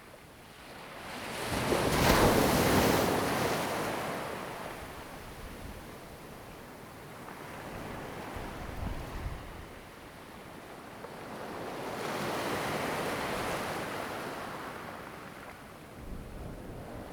sound of the waves, In the beach
Zoom H2n MS +XY